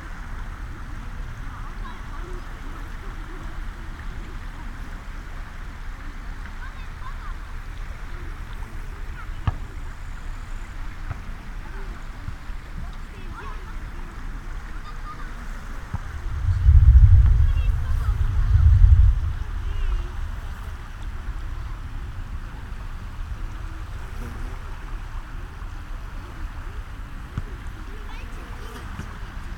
sea, people and thunder, Estonia
people swim as a thunder storm rages out at sea
Pärnumaa, Estonia, 22 July